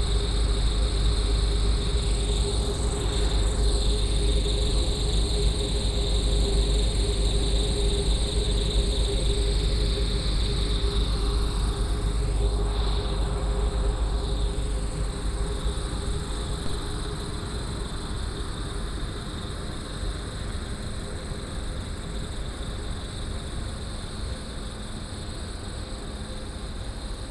basel, dreispitz, lüftung an bahngleisen
abends im bahn- und verladegelände dreispitz, die aussenlüftung eines kühlgebäudes an den bahnanlagen
soundmap international
social ambiences/ listen to the people - in & outdoor nearfield recordings